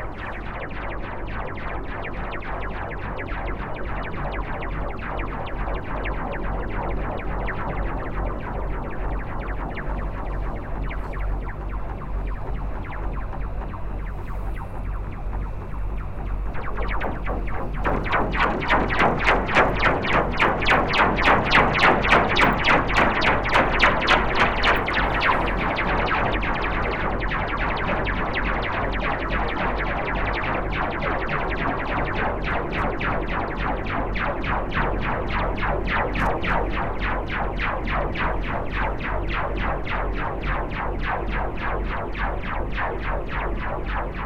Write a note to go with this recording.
Playing with a bridge cable, but I was still alone : it was very uneasy to fix the two microphones on the cables. But I still think it's a good place, which require to come back.